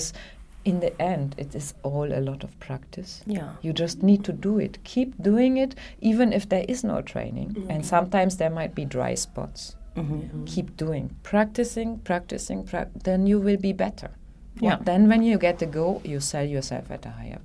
Radio Wezhira, Masvingo, Zimbabwe - Studio workshop conversation...
The recording pictures part of a workshop meeting with four of the young women members of the studio team. Sharon Mpepu, Thabeth Gandire, Chiedza Musedza, and Ivy Chitengedza are coming to the community radio and studio practice from varied professional backgrounds, but now they are forming an enthusiastic team of local journalists. Chiedza, who already came from media practice to the community radio, begins describing a situation they recently faced while gathering information in town… the others join in…